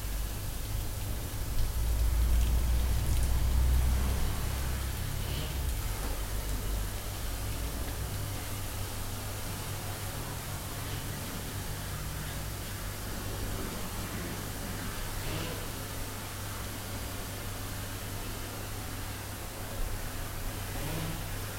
building site, cologne - building site, cologne, dreikoenigenstr
recorded june 4, 2008. project: "hasenbrot - a private sound diary".